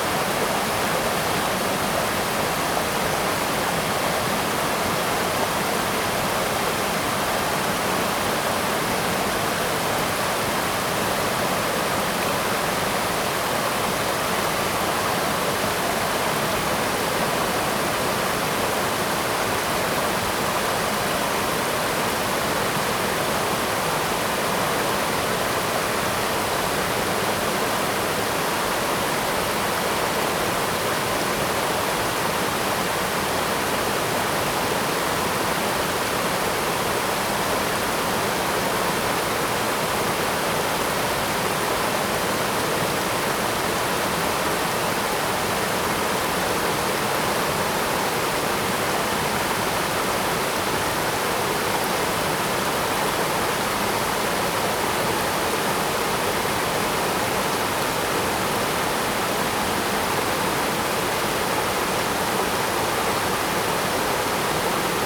五峰旗瀑布, 礁溪鄉大忠村, Yilan County - waterfall
Waterfalls and rivers
Zoom H2n MS+ XY